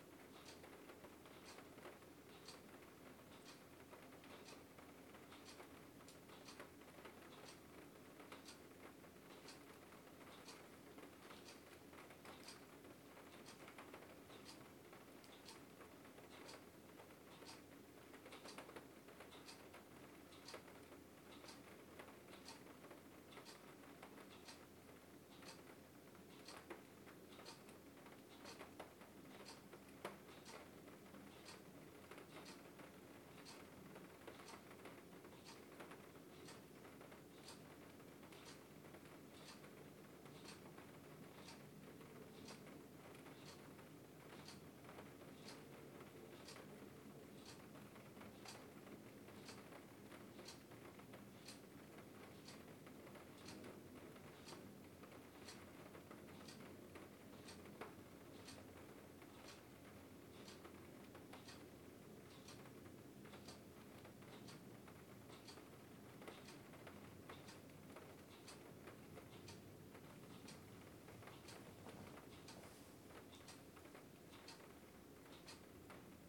Rain falls on the sunroof while a clock ticks and a dog sleeps.
Kensington, CA, USA